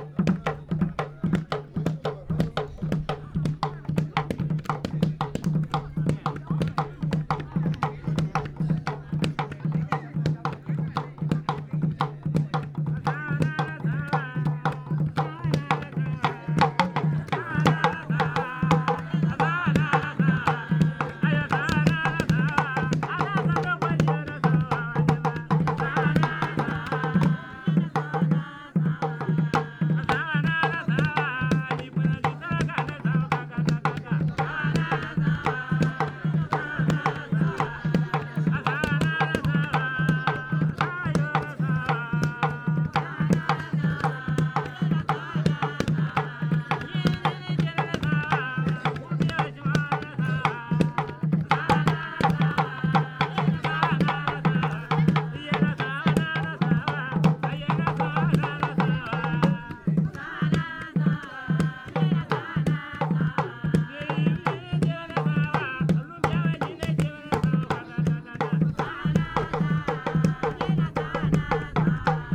Sourou, Burkina Faso - traditionnal music
A party organised around the fire at night - dances and music
Lanfièra, Burkina Faso, 22 May 2016